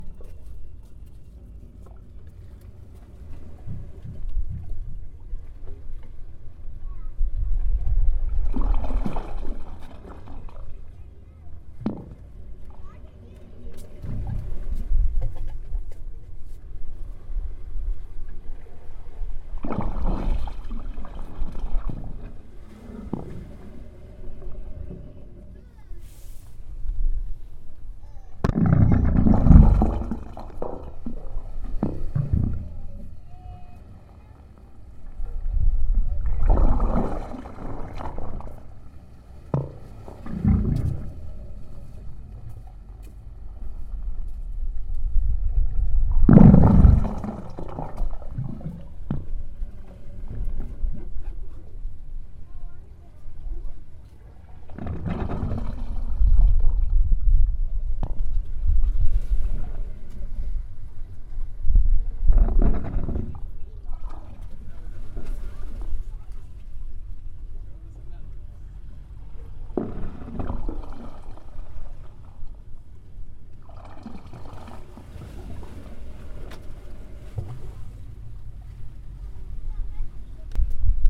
Wave Organ sounds recorded with a Zoom